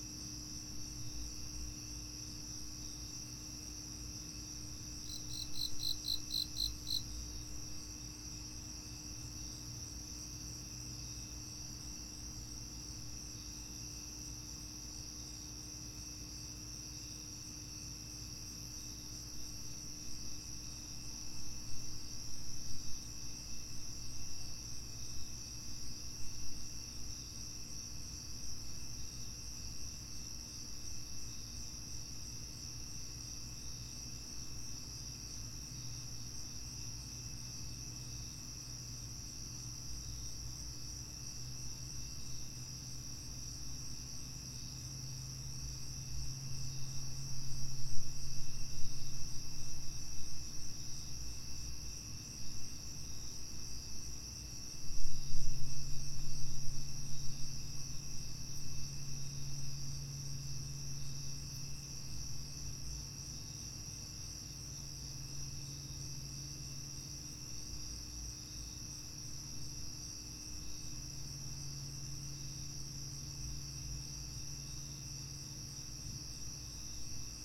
Leesburg, VA, USA - Nighttime sounds
Sounds of a summer night in Leesburg, VA. Recorded on a Tascam DR-07MKII with internal mics in A-B (wide stereo) position.
Post-processing included: trimming start and finish (with fades), cutting two small sections of distortion in the middle, and normalizing.
Recording starts at about 12:33am on August 16, 2015.